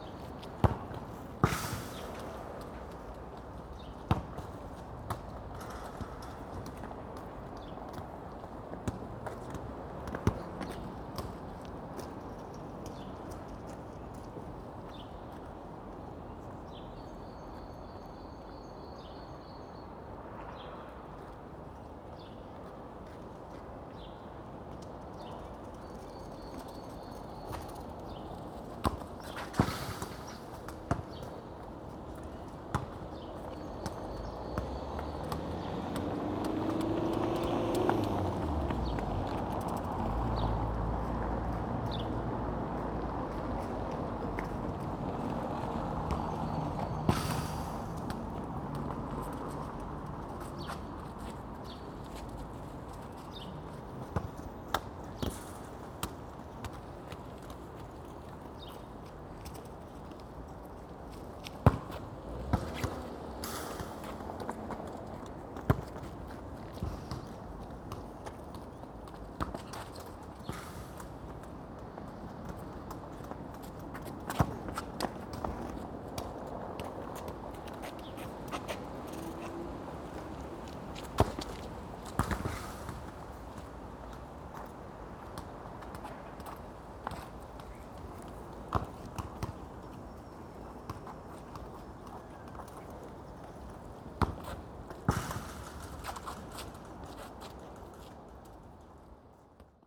Moabit, Berlin, Germany - Football against the wire
Dad and son play intently without speaking.